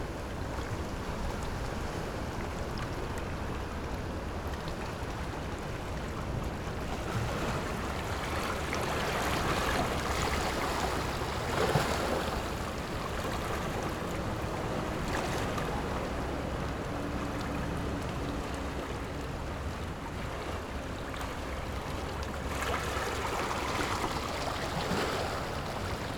{"title": "大窟澳, Gongliao District - Rocks and waves", "date": "2014-07-29 17:38:00", "description": "Rocks and waves, Very hot weather, Traffic Sound\nZoom H6+ Rode NT4", "latitude": "24.98", "longitude": "121.97", "timezone": "Asia/Taipei"}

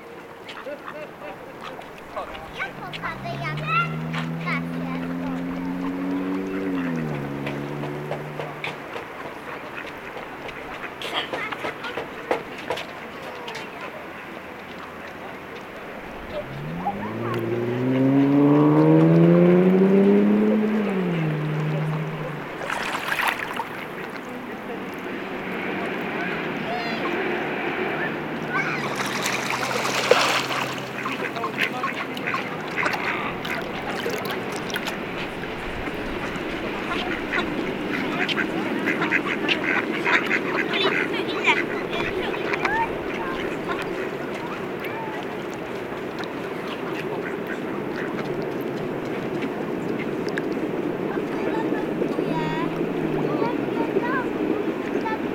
{"title": "Nowa Huta Bay, Kraków, Poland - (882) Ducks and kids", "date": "2022-01-06 14:50:00", "description": "An attempt to record a group of ducks. As usual, they've become shy while in front of a microphone.\nRecorded with Olympus LS-P4.", "latitude": "50.08", "longitude": "20.05", "altitude": "205", "timezone": "Europe/Warsaw"}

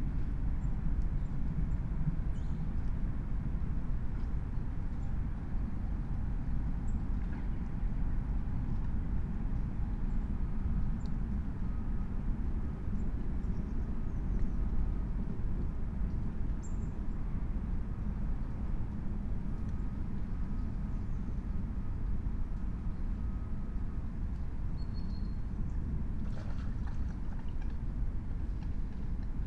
{"title": "Berlin, Alt-Friedrichsfelde, Dreiecksee - train junction, pond ambience", "date": "2021-08-30 08:00:00", "description": "08:00 Berlin, ALt-Friedrichsfelde, Dreiecksee - train triangle, pond ambience", "latitude": "52.51", "longitude": "13.54", "altitude": "45", "timezone": "Europe/Berlin"}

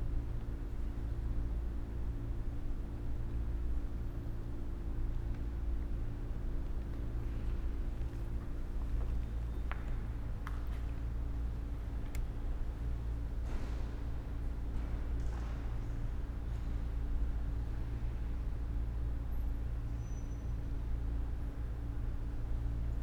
{"title": "Inside St. Louis Cathedral, New Orleans, Louisiana - Ambiance: St. Louis Cathedral", "date": "2012-09-05 13:22:00", "description": "*Best with headphones* : Respectful whispers, cell phones, camera shutters, creaky pews. Was *praying* that the very loud air conditioner would shut off, but it was 104 degrees Fahrenheit..\nCA-14(quasi binaural) > Tascam DR100 MK2", "latitude": "29.96", "longitude": "-90.06", "altitude": "11", "timezone": "America/Chicago"}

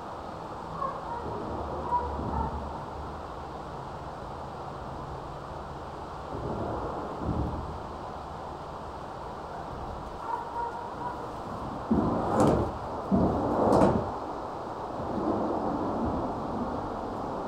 {"title": "Catonsville, MD, USA - Traffic heard from under bridge", "date": "2016-11-06 02:00:00", "description": "The muffled sounds of cars passing only a few feet above, recorded with a Tascam DR-40.", "latitude": "39.29", "longitude": "-76.78", "altitude": "72", "timezone": "America/New_York"}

